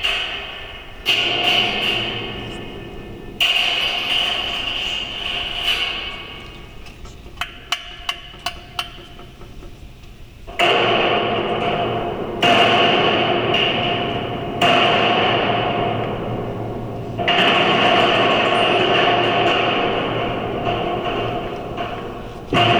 A collective improvisation for walking bridge and contact microphones.
Produced during the workshop "Radical Listening" at the Fine Arts Faculty in Cuenca, Spain.
Technical details:
2 C-series contact microphones.